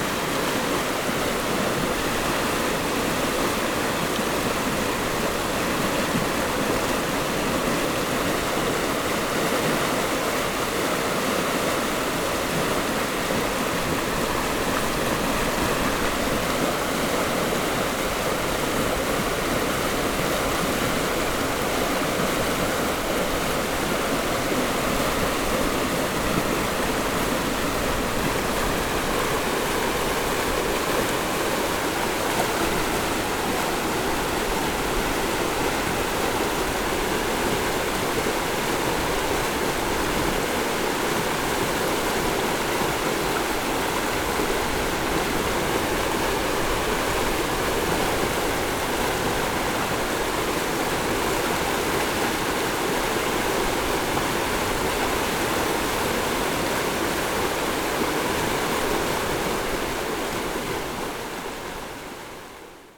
Lanyang River, 員山鄉中華村 - Stream after Typhoon
Stream after Typhoon, Traffic Sound
Zoom H6 MS+ Rode NT4
Yilan County, Taiwan, 25 July 2014, 14:07